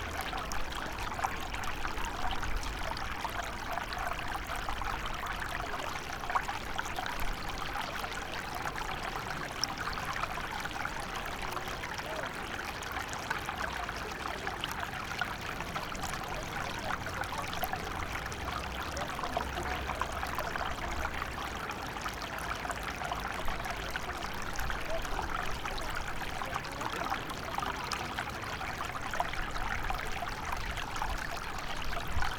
Maribor, Mestni park, water flows from a small canal into a pond
(Son PCM D50 inernal mics)